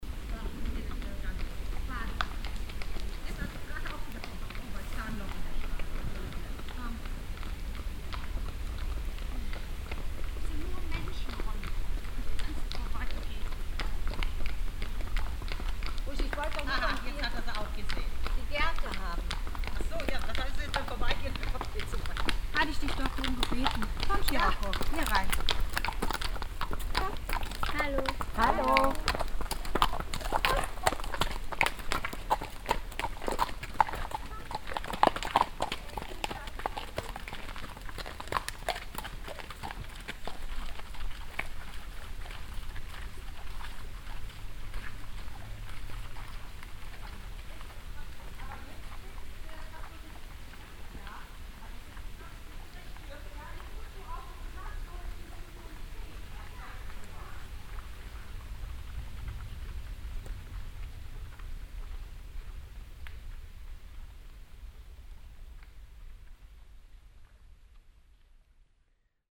three horse riders passing by on a path in the woods
soundmap nrw - social ambiences and topographic field recordings
Windeck, Germany